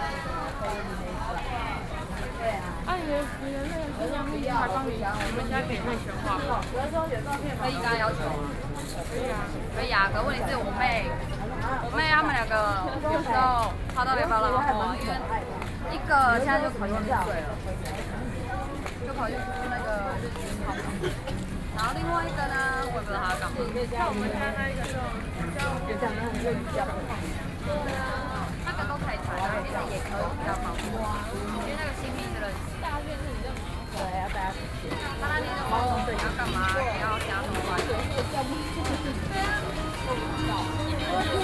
Sanchong, New Taipei city - SoundWalk
5 October 2012, ~22:00